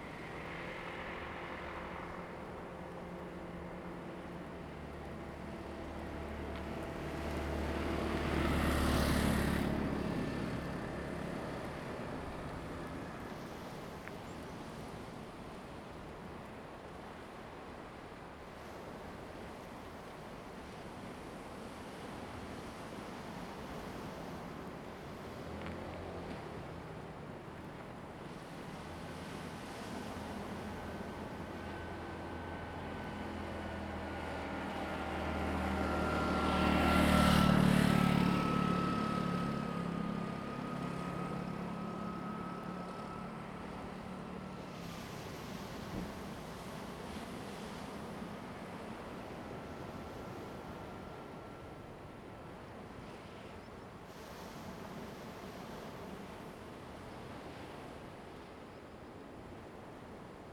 Jimowzod, Koto island - On the road
On the coast, Traffic Sound, Sound of the waves
Zoom H2n MS +XY
Lanyu Township, Taitung County, Taiwan